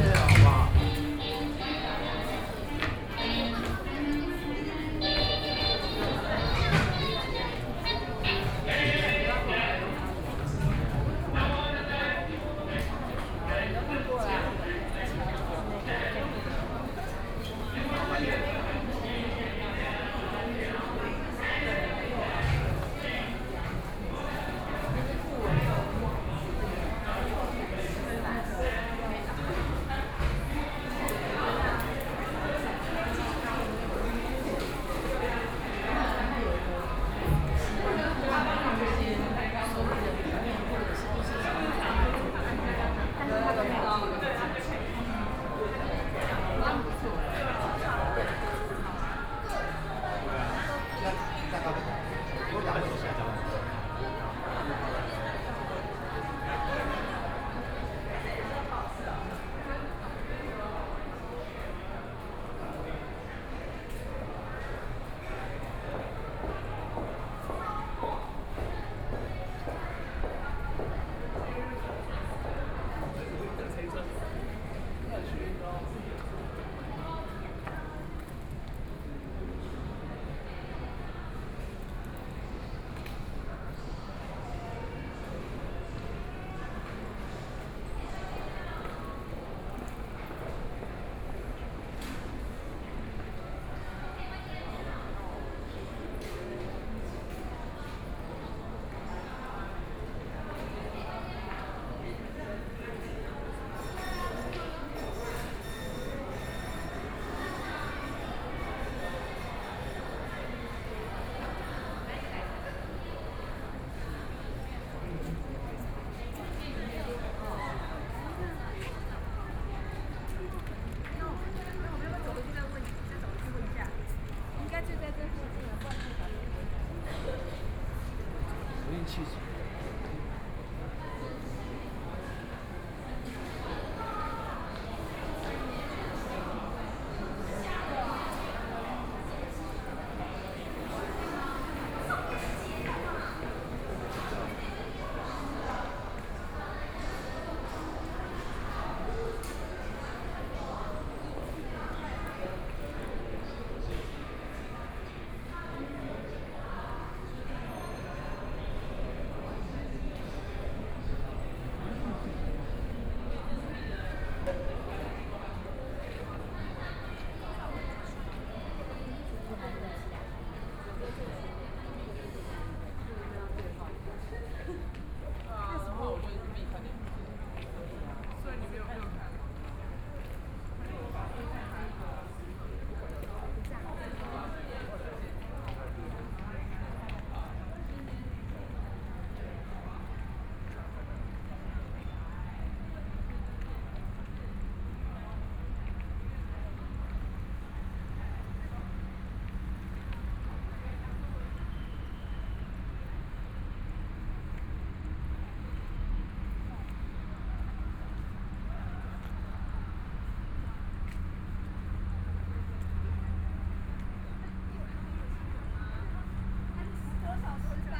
walking in the Creative Park, Binaural recordings, Sony PCM D50 + Soundman OKM II
Taipei City, Taiwan